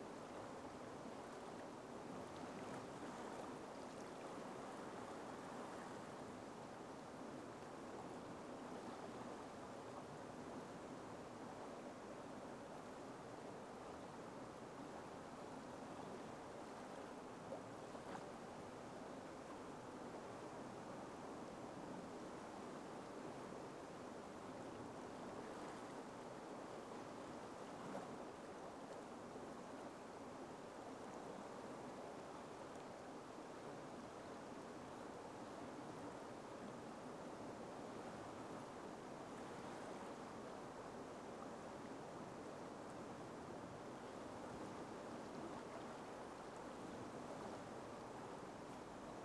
Bywell Bridge, Stocksfield, UK - River Tyne Under Bywell Bridge, Northumberland
River Tyne as it flows under the bridge at Bywell. Occasional sound of water dripping from the arch of the bridge on to the recorder. Recorder used was a hand-held Tascam DR-05.
United Kingdom